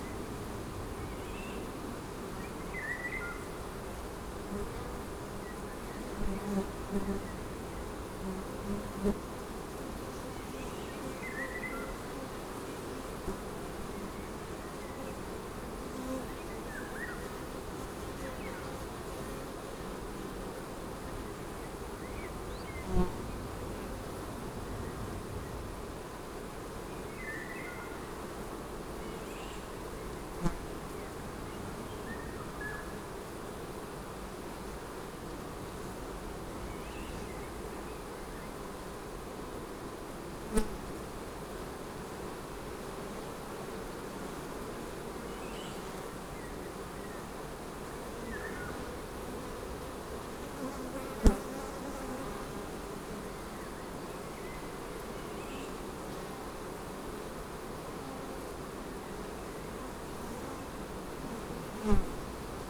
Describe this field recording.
strange bee-garden found in the wilderness: many beehives made in one carriage